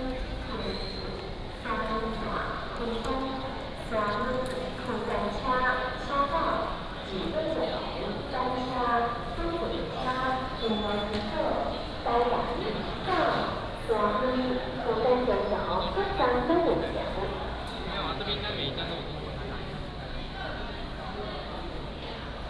Tainan Station, East Dist., Tainan City - In the station hall
In the station hall, Traffic sound, Station Message Broadcast